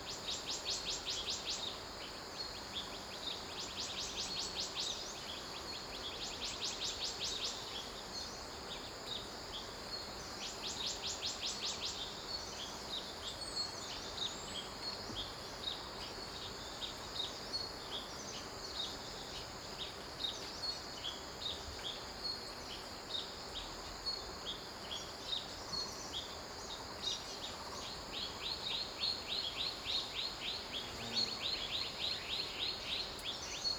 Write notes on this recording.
Early morning on the farm in the mountains, Bird cry, Insect noise, Stream sound, Zoom H6+ Rode NT4